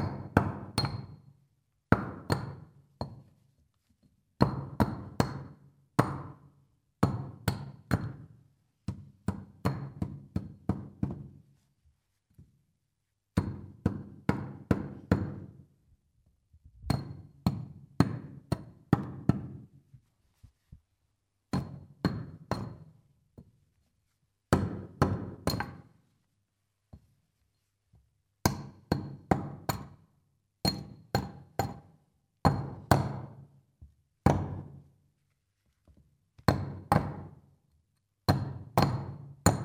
erkrath, neandertal, altes museum, steinzeitwerkstatt - steinzeitwerkstatt - faustkeil und baum
klänge in der steinzeitwerkstatt des museums neandertal - hier: bearbeitung eines baumes mit dem faustkeil
soundmap nrw: social ambiences/ listen to the people - in & outdoor nearfield recordings, listen to the people